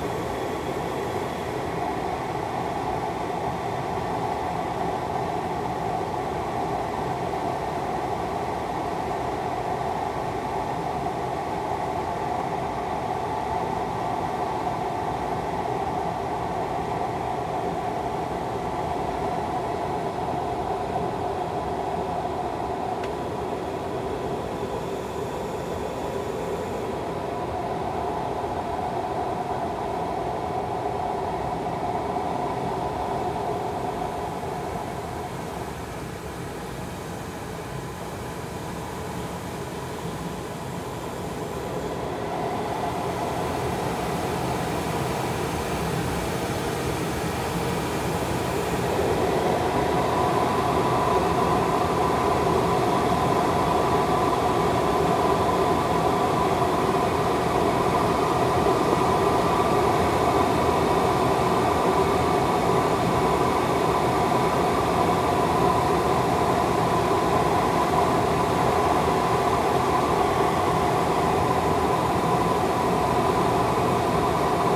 recording of a gliding flight around kievit airport (Hasselt) in aircraft Twin Astir II. Recorded with zoom H5 This recording has been edited to a 15min. piece.
Hasselt, Belgium, 6 May 2017